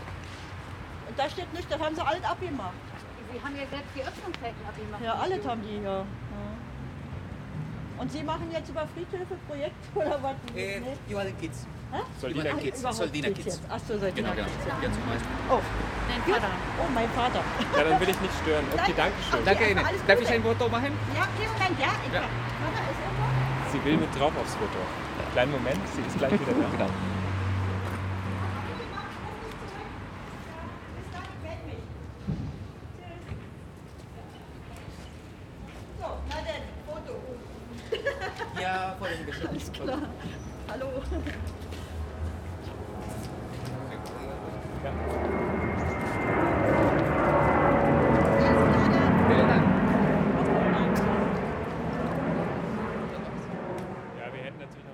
Wollankstraße, Soldiner Kiez, Berlin, Deutschland - Wollankstraße 60, Berlin - At the flower shop next to St. Elisabeth cemetery II
Wollankstraße - Im Blumenladen neben dem Eingang zum Sankt-Elisabeth-Kirchhof II.
Berlin, Germany, November 2012